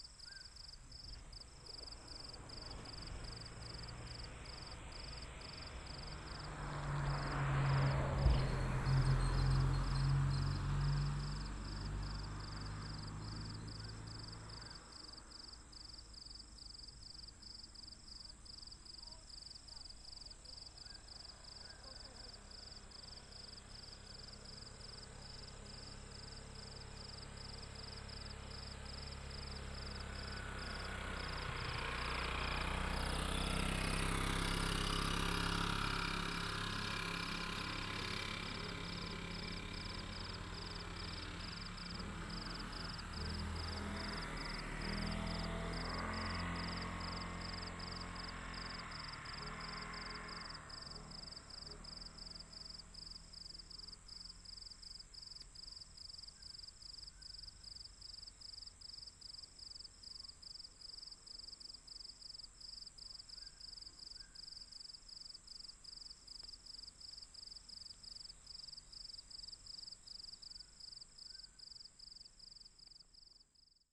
佳德, 牡丹鄉 Mudan Township - Night mountains
Night mountains, Traffic sound, Insect noise, Bird call